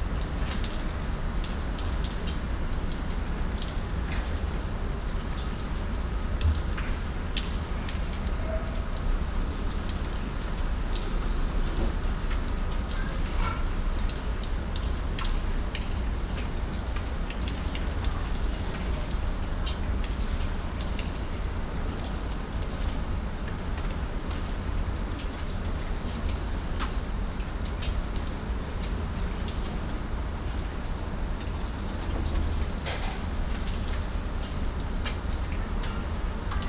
cologne, bismarckstr, rain in the backyard
soundmap nrw: social ambiences/ listen to the people in & outdoor topographic field recordings
bismarckstraße, 7 August, 18:08